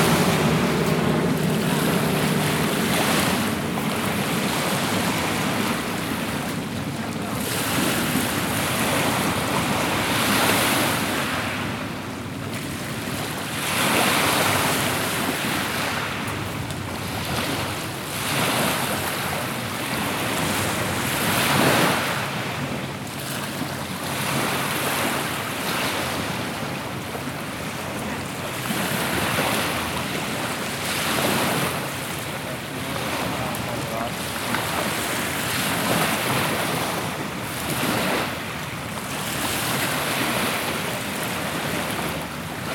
Ashbridges Bay Park, Toronto, ON, Canada - WLD 2018: Ashbridges Bay Park 1
Small beach with waves.